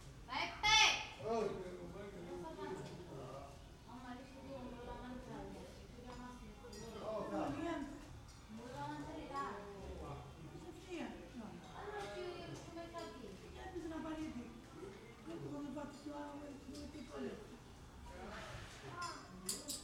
Ambient recording at this location using a Zoom h5 and a matched pair of Clippy EM272 high sensitivity omni-directional low noise microphone's. Audio contains chatter from the surrounding neighbours in these narrow lanes where they shout across to each other.
Sicilia, Italia, 16 January